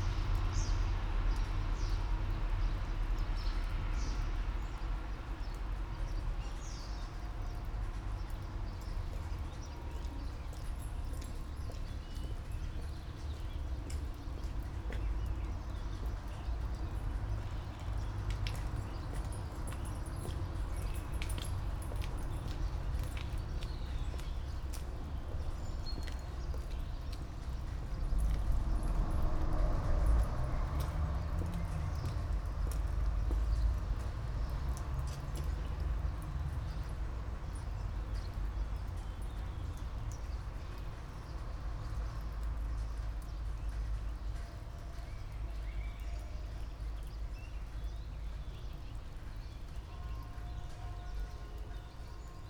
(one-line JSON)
{"title": "all the mornings of the ... - may 11 2013 sat", "date": "2013-05-11 06:55:00", "latitude": "46.56", "longitude": "15.65", "altitude": "285", "timezone": "Europe/Ljubljana"}